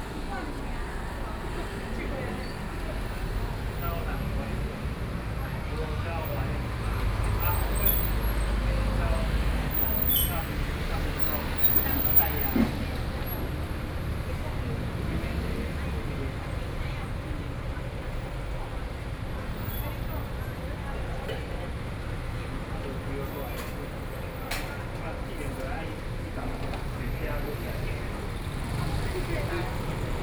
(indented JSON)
{
  "title": "Zhongzheng Rd., 羅東鎮成功里 - Corner",
  "date": "2014-07-27 10:18:00",
  "description": "In the Corner, Traffic Sound, At the roadside, Close to the traditional market\nSony PCM D50+ Soundman OKM II",
  "latitude": "24.67",
  "longitude": "121.77",
  "altitude": "14",
  "timezone": "Asia/Taipei"
}